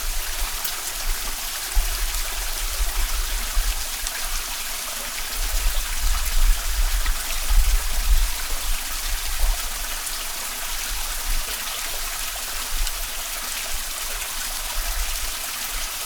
wugu, New Taipei City - The sound of running water
新北市 (New Taipei City), 中華民國, 3 July, ~17:00